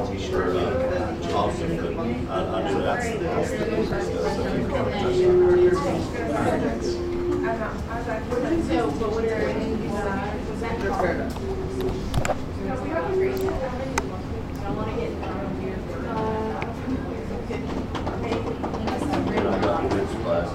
Boone, NC, USA - Edwin Duncan Classroom 200
Teacher and Students talking before Social Work class.